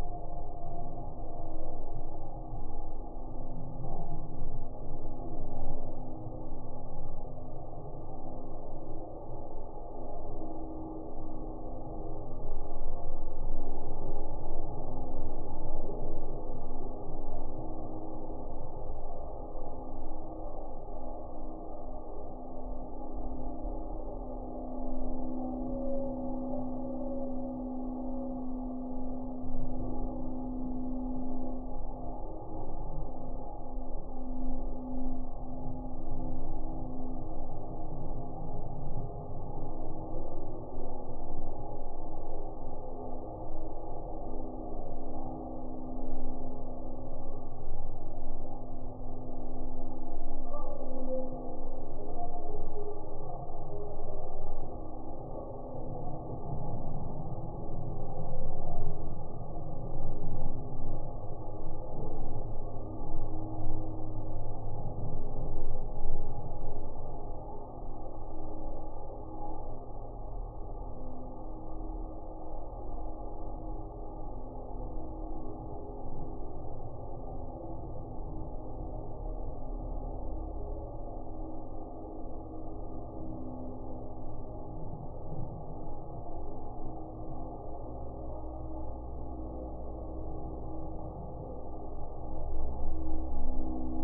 Metalic Bridge, Cais dos Mercanteis, Aveiro, Portugal - Metalic Bridge resonating
Metalic bridge resonating with people footsteps and boats passing by in the canal. Recorded with an SD mixpre6 and a LOM Geofon attached to the suspended bridge mast.
Centro, Portugal, 26 August, 11:10